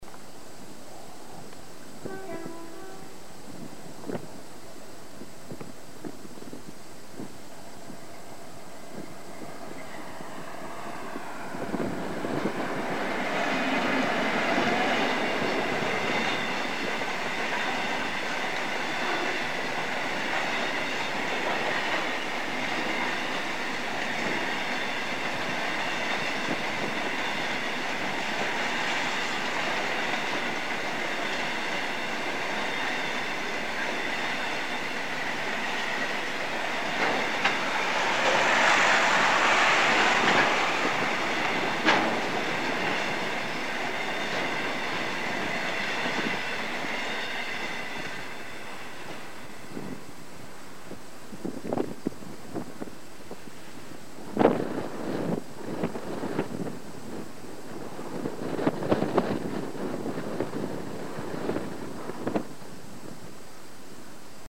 A coal train from Fiddlers Ferry Power Station passes the path through the farmers field.